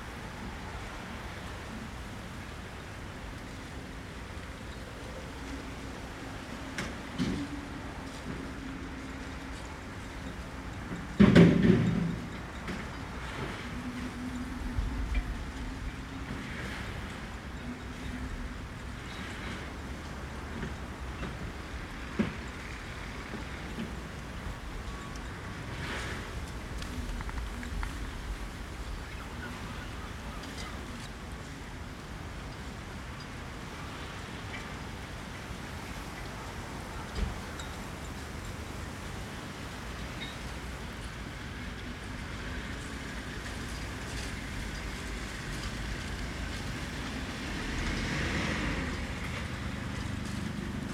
Brighton Marina, East Sussex, UK - Brighton Marina
light wind, fisherman working nearby. recorded on olympus ls11 with em272 clippy external mics.